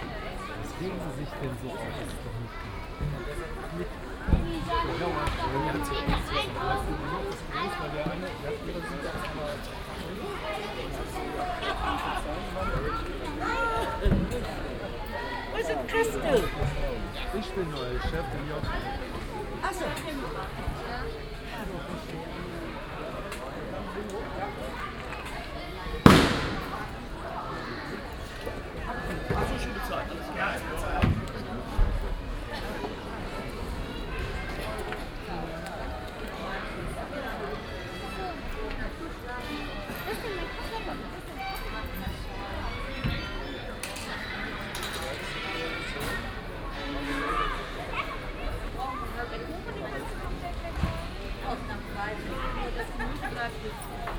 cologne, sued, mainzerstrasse, strassenfest - cologne, mainzerstrasse, strassenfest
südstadt strassenfest, nachmittags, verkaufstände, biertalk und das unvermeindliche einstimmen von klaus dem geiger
soundmap nrw:
social ambiences, topographic field recordings